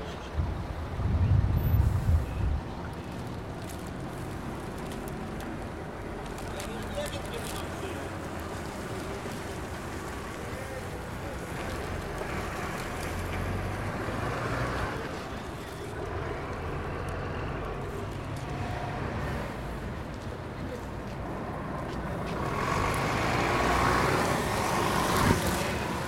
PKS/ Bus station Lodz, ul. Knychalskiego
bus station Lodz
18 November 2011